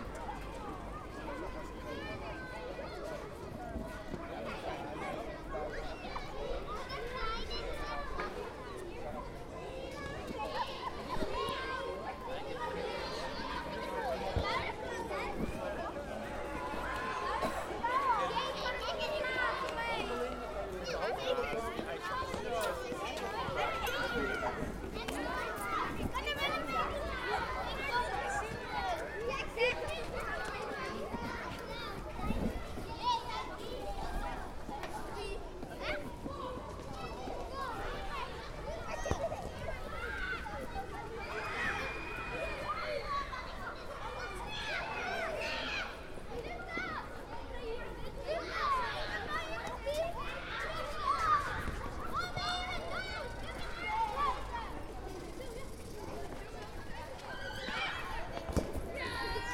Rondje Tellegenbuurt, Amsterdam, Nederland - Hard versus Zacht / Hard versus Soft
(description in English below)
Dit gebied zit vol tegenstrijdigheden. Het ene moment sta je op een plein vol spelende kinderen, het andere moment bevindt je je in een oase van rust. Het hofje laat geluid van buiten nauwelijks toe.
This area is full of contradictions. One moment you'll find yourself on a square loaded with playing and screaming children, the other moment you're in an oasis of silence. The courtyard hardly allows any sounds from outside.
Amsterdam, The Netherlands